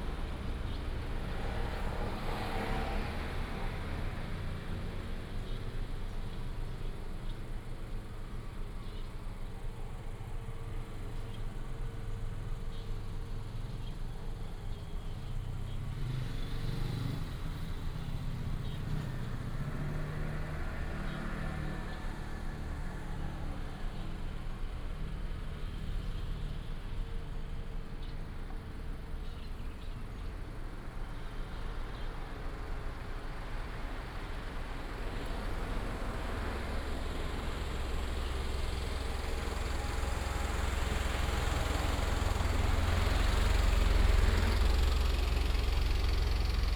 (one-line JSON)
{"title": "南平路二段508號, Pingzhen Dist., Taoyuan City - PARKING LOT", "date": "2017-08-26 06:19:00", "description": "In the convenience store parking lot, The sound of birds, Traffic sound, Fire engines pass", "latitude": "24.92", "longitude": "121.19", "altitude": "157", "timezone": "Asia/Taipei"}